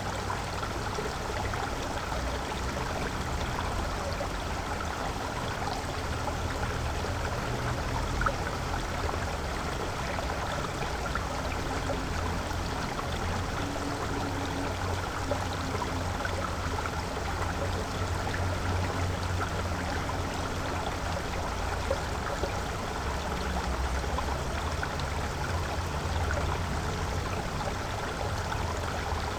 {"title": "burg/wupper, mühlendamm: bach - the city, the country & me: creek", "date": "2011-10-15 14:33:00", "description": "the city, the country & me: october 15, 2011", "latitude": "51.14", "longitude": "7.15", "altitude": "116", "timezone": "Europe/Berlin"}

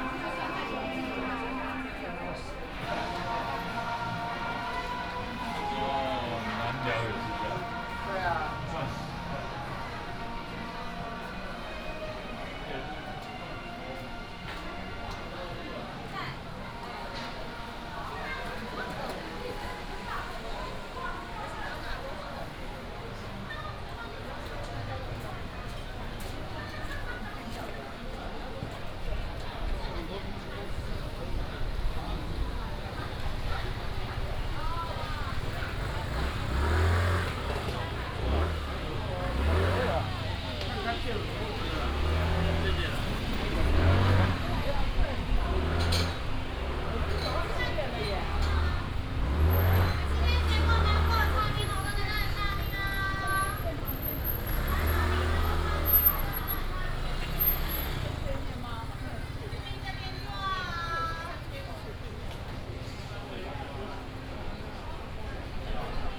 {"title": "湖口老街, Hukou Township - Traditional old building blocks", "date": "2017-08-12 16:52:00", "description": "Traditional old building blocks, traffic sound, Shopping Street, Tourists", "latitude": "24.88", "longitude": "121.06", "altitude": "104", "timezone": "Asia/Taipei"}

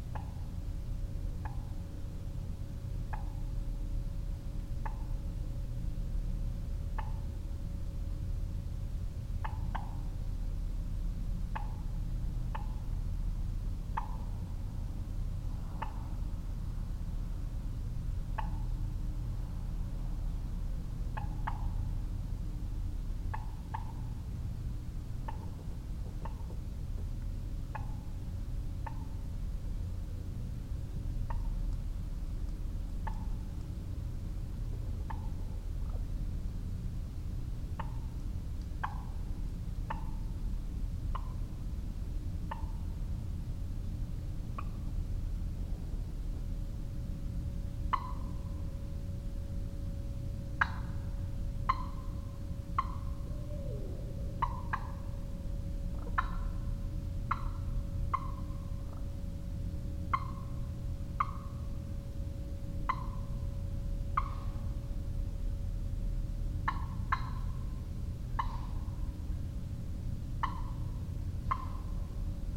{"title": "Downe, NJ, USA - wild turkey scuffle", "date": "2016-10-12 02:00:00", "description": "I'm not sure of what happens to the wild turkey at the conclusion of this recording. A barred owl hoots from a nearby tree shortly before the obvious scuffle.", "latitude": "39.34", "longitude": "-75.06", "altitude": "13", "timezone": "America/New_York"}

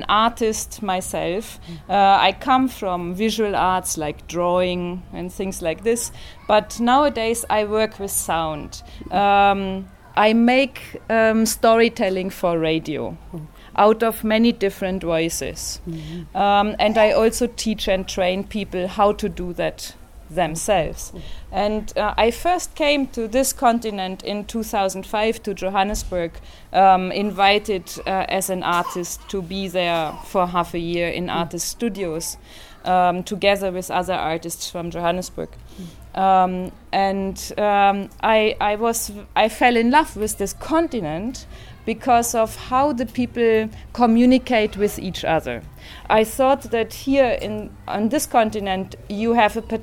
{"title": "Chipata, Lusaka, Zambia - Ad hoc radio workshop in the yard...", "date": "2012-11-30 10:40:00", "description": "...i took out my recorder when the women introduced us singing... and a radio workshop began...", "latitude": "-15.35", "longitude": "28.30", "altitude": "1222", "timezone": "Africa/Lusaka"}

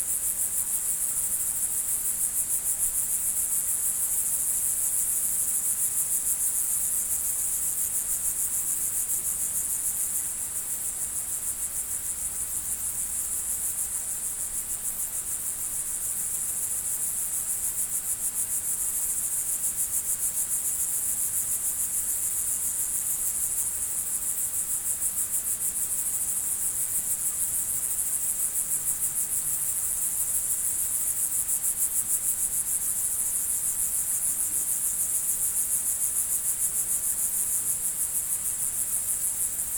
{"title": "Lądek-Zdrój, Pologne - Grasshoppers", "date": "2016-08-17 21:12:00", "description": "A lot of crazy grasshoppers in a extensive grazing.", "latitude": "50.39", "longitude": "16.86", "altitude": "511", "timezone": "Europe/Warsaw"}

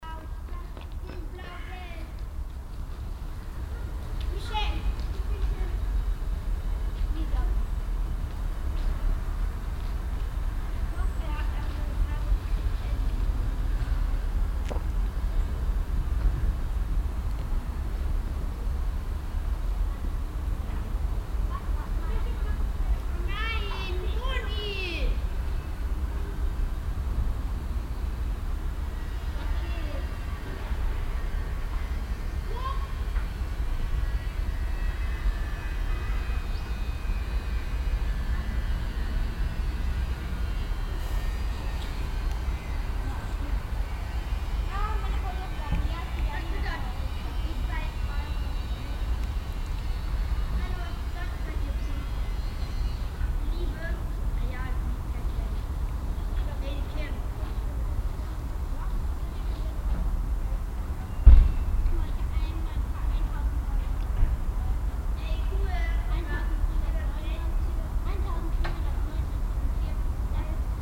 {"title": "refrath - wittenbergstrasse, am schulhof - refrath, wittenbergstrasse, am schulhof", "description": "morgens am schulhof, spielende kinder, ein fahrzeug\nsoundmap nrw: social ambiences/ listen to the people - in & outdoor nearfield recordings", "latitude": "50.96", "longitude": "7.11", "altitude": "73", "timezone": "GMT+1"}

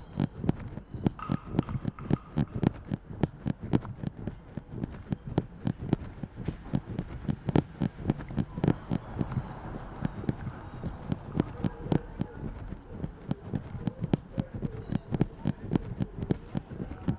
{"title": "berlin: schönleinstraße - walking the bags: walking bag #0011 by walking hensch", "date": "2008-06-12 20:43:00", "latitude": "52.49", "longitude": "13.42", "altitude": "42", "timezone": "Europe/Berlin"}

